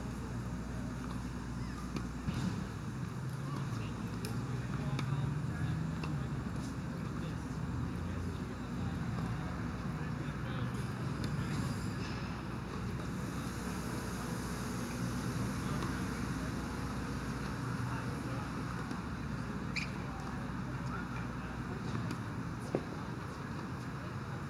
Av. de lEsplanade, Montréal, QC, Canada - Tennis court in busy park
Jeanne Meance Courts, Zoom MH-6 and Nw-410 Stereo XY
Québec, Canada, 23 August, 20:06